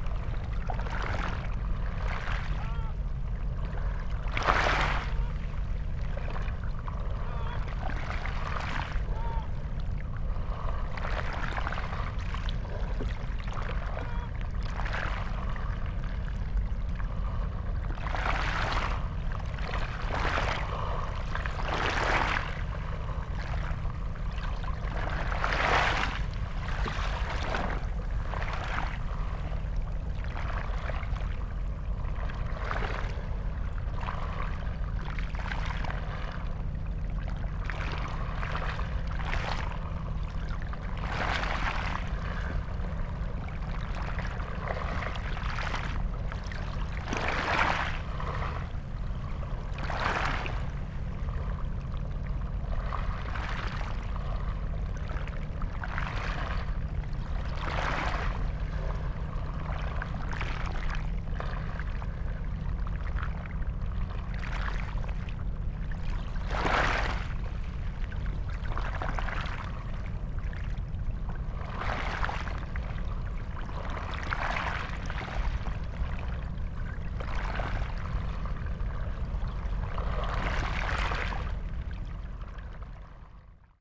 sea weaves through black mussels
Trieste, Italy, 8 September 2013, 16:41